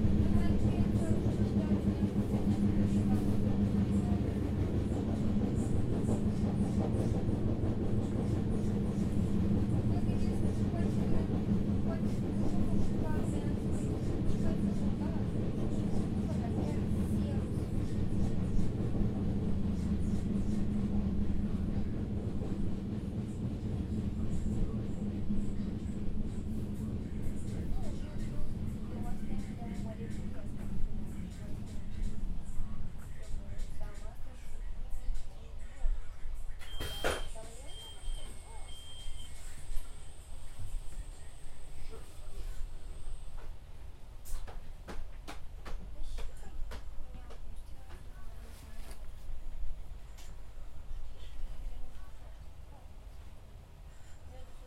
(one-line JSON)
{"title": "Fiumicino Airport train station - train onboard", "date": "2010-11-03 19:17:00", "description": "getting to Rome on a crowded train someone is thinking he has the coolest music ever...", "latitude": "41.79", "longitude": "12.25", "altitude": "5", "timezone": "Europe/Berlin"}